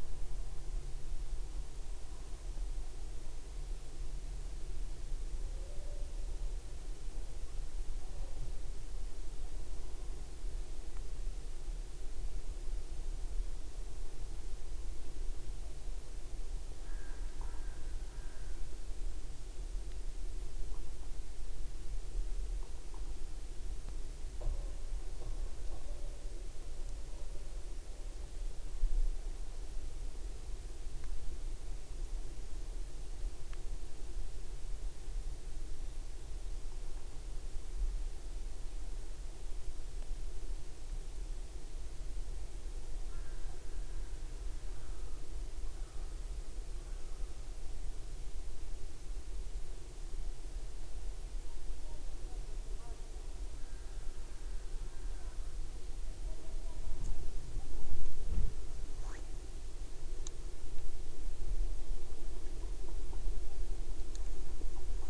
Turmantas, Lithuania, WWI fortification
WWI German fortification. Almost destroyed by time and people. I placed small microphones in dome ventilation hole...just atmosphere from inside.